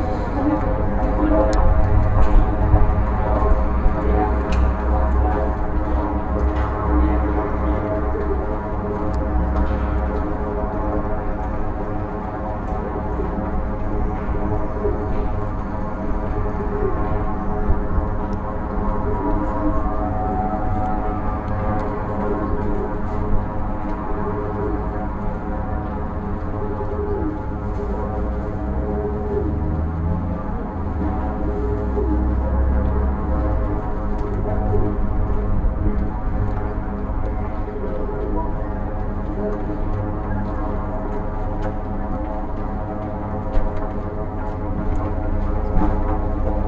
hidden sounds, resonance inside a hand railing outside a newspaper shop at Tallinns main train station
Tallinn, Estonia, 19 April 2011, ~16:00